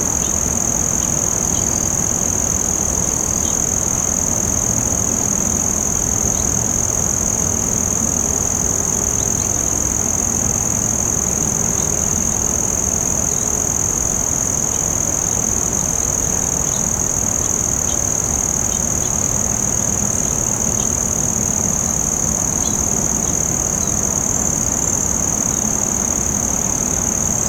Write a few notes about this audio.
Morning ambient sounds recorded from atop bluff overlooking rapids in Meramec River.